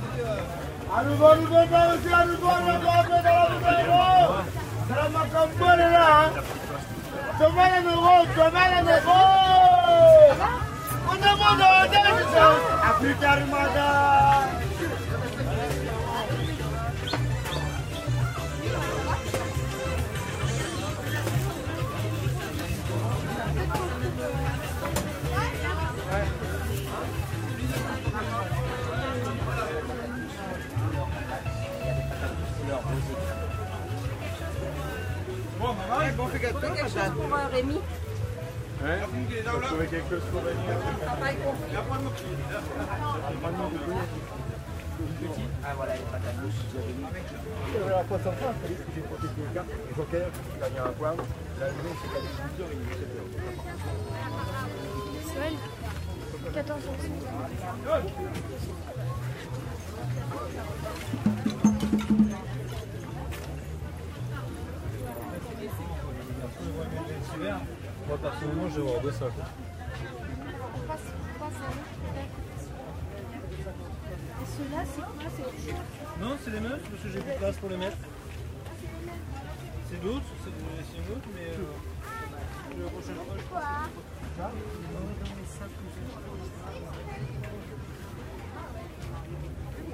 maeché de saint paul traveling sonore
vendredi aprés midi dans le marché de saint paul de la réunion
St Paul, Reunion, 31 July